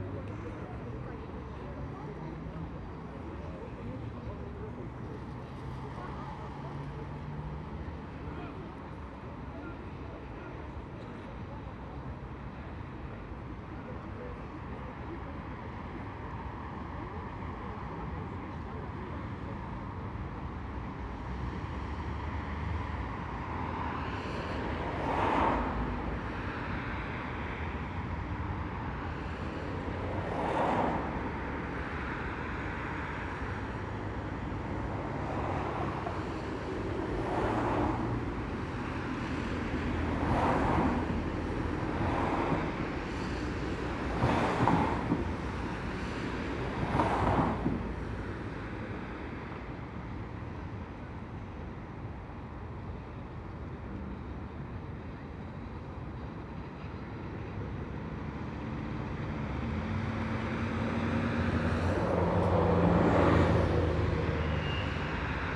Freiham Bf., München, Deutschland - morning traffic Bodenseestrasse
Dense morning traffic in the Wild-West-Munich
Bayern, Deutschland